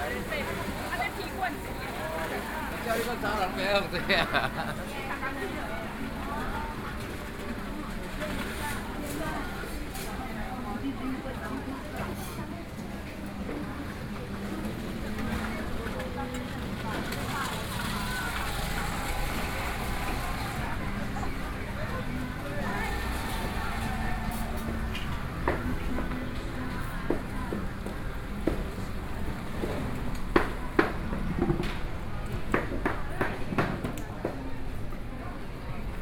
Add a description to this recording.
Walking in traditional markets